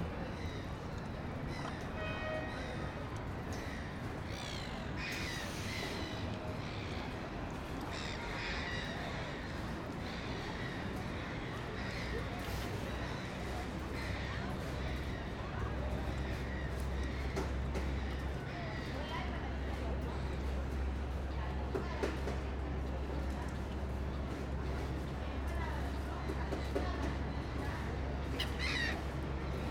Av. Arturo Prat & Libertad, Valdivia, Los Ríos, Chili - AMB VALDIVIA HARBOUR SEA LIONS BIRDS BOAT WET TRAFFIC FAR MS MKH MATRICED
This is a recording of the harbour located in Valdivia. I used Sennheiser MS microphones (MKH8050 MKH30) and a Sound Devices 633.